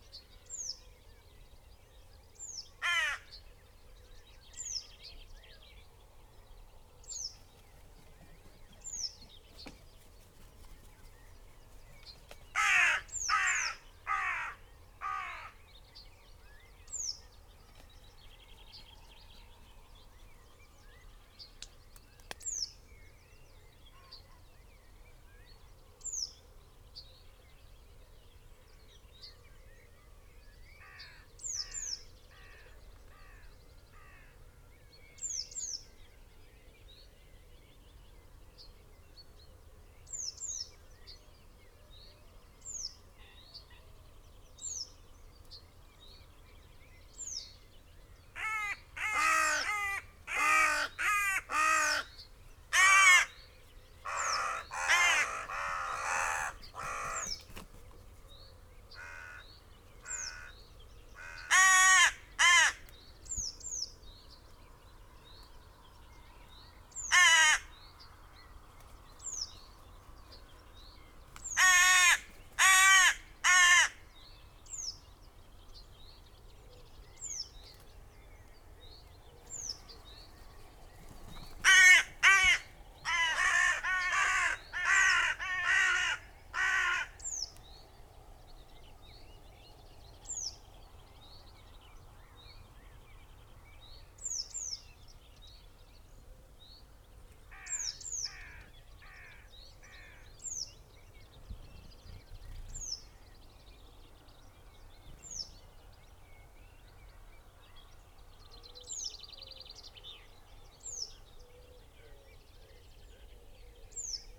Green Ln, Malton, UK - crows ... cawing ... rasping ... croaking ...
crows ... cawing ... rasping ... croaking ... lavaler mics clipped to trees ... loose flock of crows flapped ... glided ... landed ... close to the mics ... bird calls ... song ... yellow wagtail ... whitethroat ...